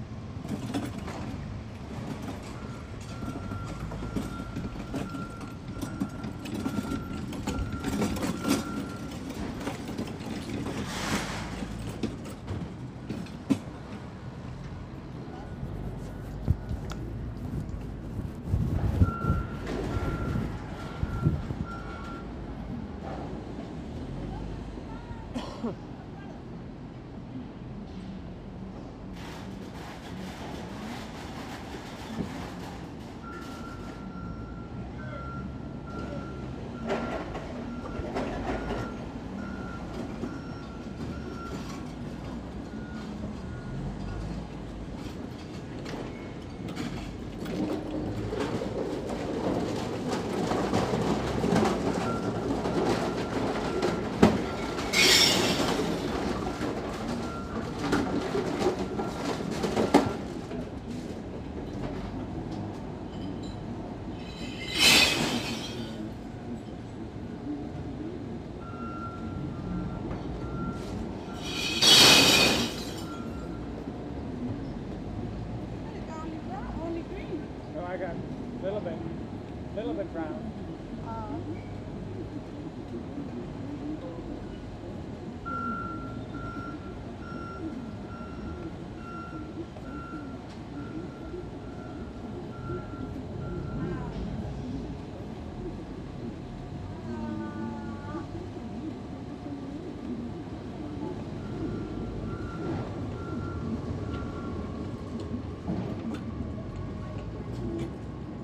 {
  "title": "Northwest Berkeley, Berkeley, CA, USA - Berkeley recycling center",
  "date": "2013-08-01 10:39:00",
  "description": "recycling beer bottles worth $13.77",
  "latitude": "37.88",
  "longitude": "-122.31",
  "altitude": "3",
  "timezone": "America/Los_Angeles"
}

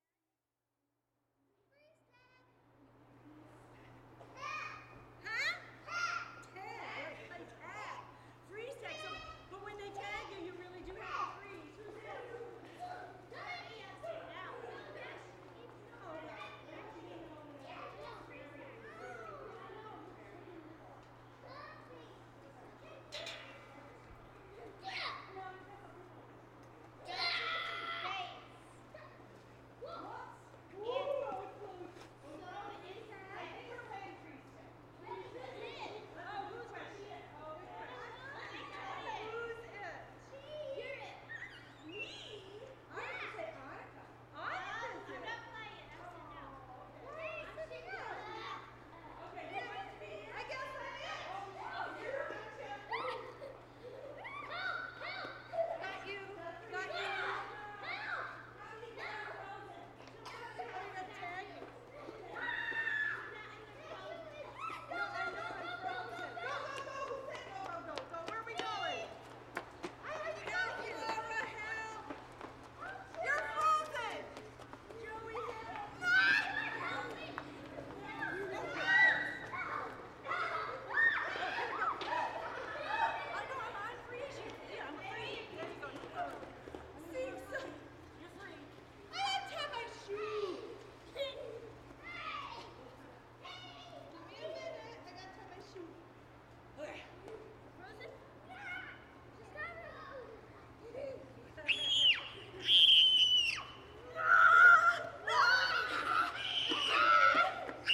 {
  "title": "Fairhaven, Bellingham, WA, USA - Kids Playing Tag in Fairhaven Green",
  "date": "2016-01-24 13:15:00",
  "description": "Kids playing tag in Fairhaven 'green'.",
  "latitude": "48.72",
  "longitude": "-122.50",
  "altitude": "15",
  "timezone": "America/Los_Angeles"
}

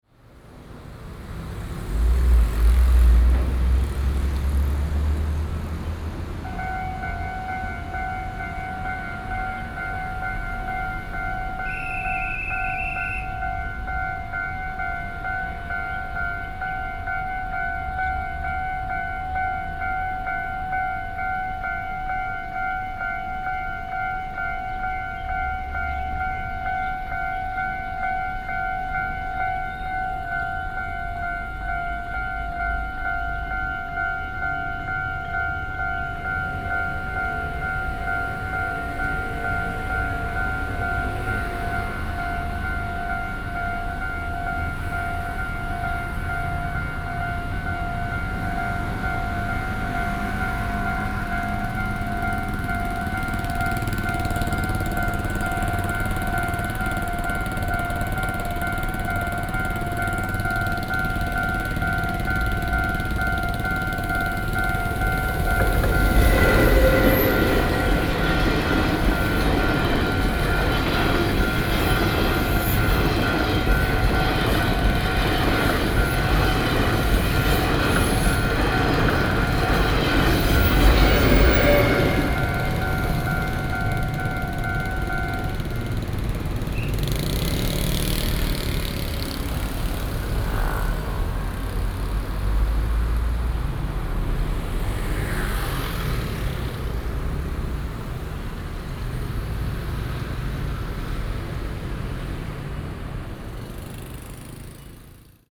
{
  "title": "Zhongzheng 1st Rd., Yingge Dist. - Railway crossings",
  "date": "2012-06-20 07:55:00",
  "description": "Railway crossings, Traffic Sound, Traveling by train\nSony PCM D50+ Soundman OKM II",
  "latitude": "24.96",
  "longitude": "121.36",
  "altitude": "53",
  "timezone": "Asia/Taipei"
}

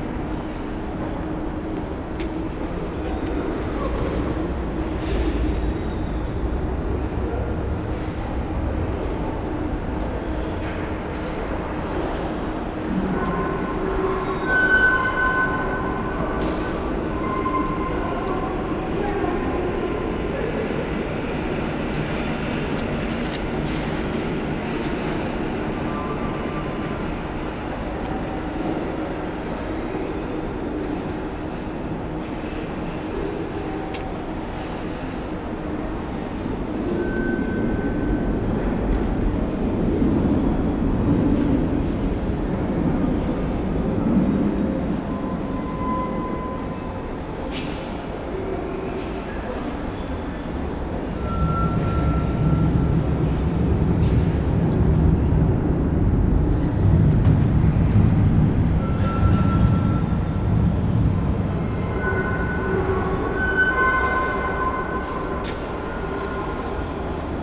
{
  "title": "Rondo Kaponiera, underground tram station - tram station ambience",
  "date": "2019-03-15 16:11:00",
  "description": "the tram station under Kaponiera traffic circle is a big, hollow, concrete space. Rather brutal space with a lot of reverberation. recorded on one of the platforms. the high-pitched sound comes from one of the ticket machines. escalator wail, traffic above, a few commuters passing by. (roland r-07)",
  "latitude": "52.41",
  "longitude": "16.91",
  "altitude": "84",
  "timezone": "Europe/Warsaw"
}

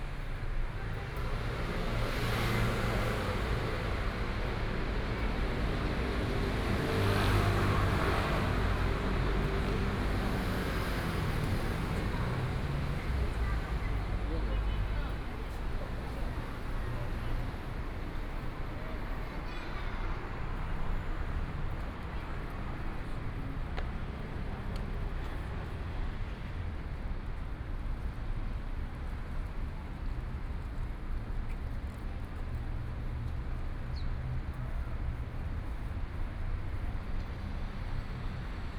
{
  "title": "中山區興亞里, Taipei City - walking on the Road",
  "date": "2014-04-04 16:52:00",
  "description": "walking on the Road, Traffic Sound\nPlease turn up the volume a little. Binaural recordings, Sony PCM D100+ Soundman OKM II",
  "latitude": "25.05",
  "longitude": "121.53",
  "altitude": "13",
  "timezone": "Asia/Taipei"
}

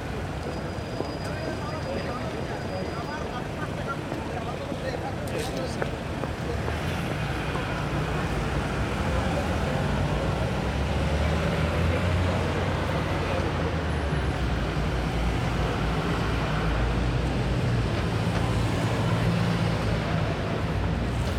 {
  "title": "Liverpool Street station, Bishopsgate, City of London, Greater London, Vereinigtes Königreich - Liverpool Street station, London - Street vendors distributing the 'Free Standard'",
  "date": "2013-02-14 17:09:00",
  "description": "Liverpool Street station, London - Street vendors distributing the 'Free Standard'. Street cries, traffic, chatter, steps, passers-by, ambulance.\n[Hi-MD-recorder Sony MZ-NH900, Beyerdynamic MCE 82]",
  "latitude": "51.52",
  "longitude": "-0.08",
  "altitude": "33",
  "timezone": "Europe/London"
}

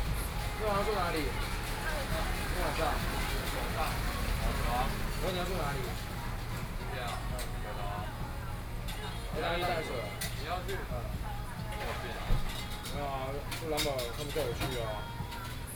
{
  "title": "Beitou District, Taipei City - Ice drink shop",
  "date": "2014-04-17 21:13:00",
  "description": "Ice drink shop\nPlease turn up the volume a little. Binaural recordings, Sony PCM D100+ Soundman OKM II",
  "latitude": "25.13",
  "longitude": "121.50",
  "altitude": "11",
  "timezone": "Asia/Taipei"
}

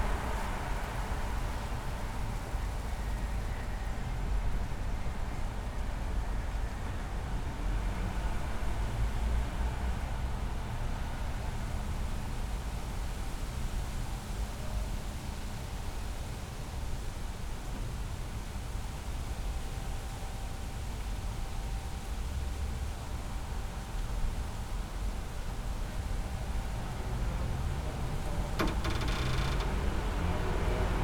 {
  "title": "pension Spree, Wilmersdorf, Berlin - night, window",
  "date": "2015-11-09 23:40:00",
  "description": "first floor, night streets ambience, trees and wind, passers-by\nSonopoetic paths Berlin",
  "latitude": "52.49",
  "longitude": "13.33",
  "altitude": "38",
  "timezone": "Europe/Berlin"
}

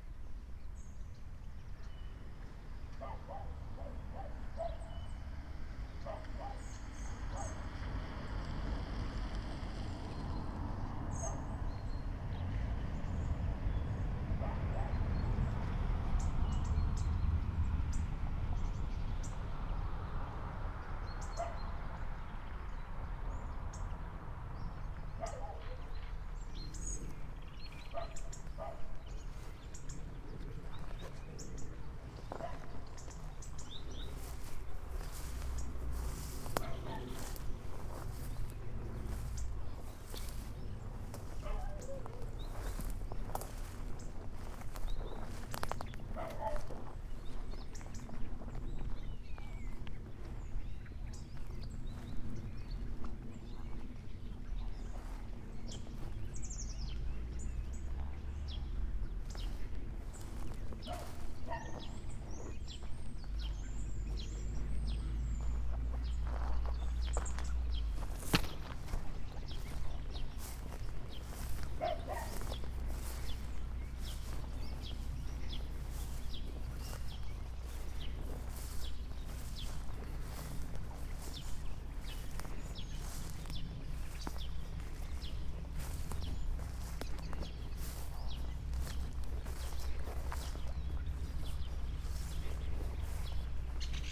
{
  "title": "Husova, Lom, Tschechien - walk around the non existent station",
  "date": "2017-09-25 10:00:00",
  "description": "while waiting for departure, a short walk around the station in Lom, which in fact does not exist..., except that a train stops here... (Sony PCM D50, Primo EM 172)",
  "latitude": "50.59",
  "longitude": "13.66",
  "altitude": "291",
  "timezone": "Europe/Prague"
}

